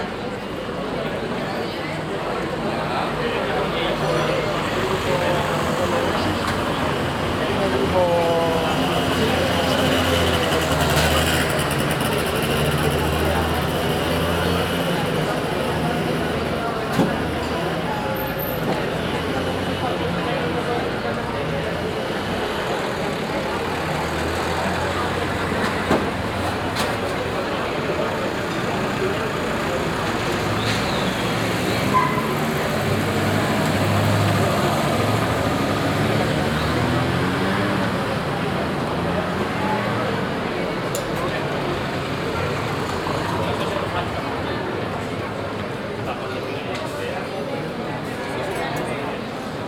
Sevilla, Provinz Sevilla, Spanien - Sevilla - Calle San Luis - people standing in a line
In the narrow street Calle San Luis during the white night. People standing and talking in a line to access the church. The sounds of voices and traffic passing by.
international city sounds - topographic field recordings and social ambiences
Sevilla, Spain, 8 October, ~21:00